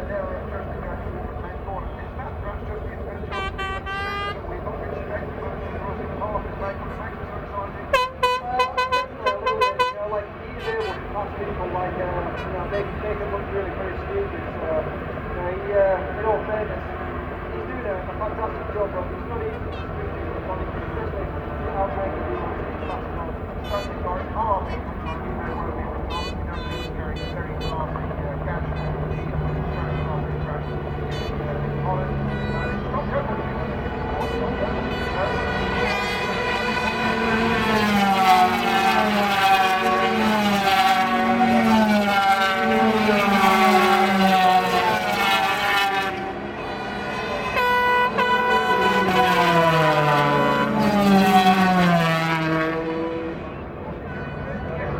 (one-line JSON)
{"title": "Castle Donington, UK - British Motorcycle Grand Prix 2001 ...", "date": "2001-07-08 13:00:00", "description": "500cc motorcycle race ... part one ... Starkeys ... Donington Park ... the race and all associated noise ... Sony ECM 959 one point stereo mic to Sony Minidisk ...", "latitude": "52.83", "longitude": "-1.37", "altitude": "81", "timezone": "Europe/London"}